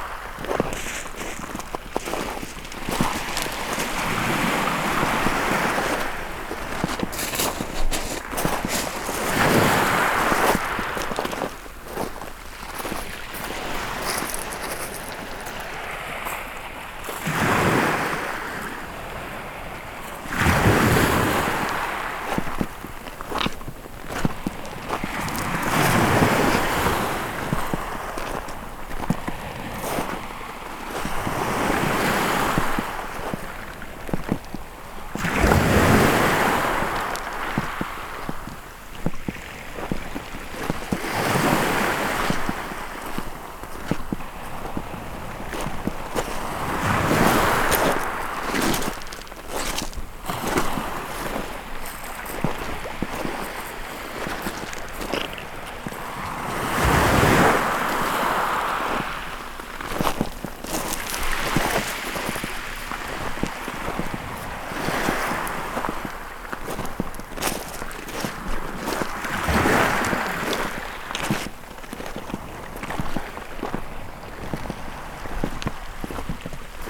Walking on Shingle, Thorpeness, Suffolk, UK - Shingle
Walking on the shingle beach as close as I can to the water's edge. The two lavaliers are suspended by hand just above my feet to get the best sound.
MixPre 3 with 2 x Beyer Lavaliers.